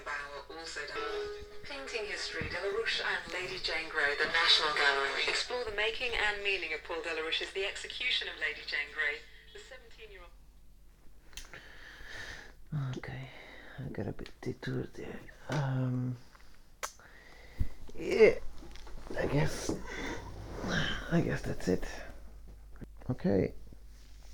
Hatfield Street
Listen to this, while you are walking to somewhere nice.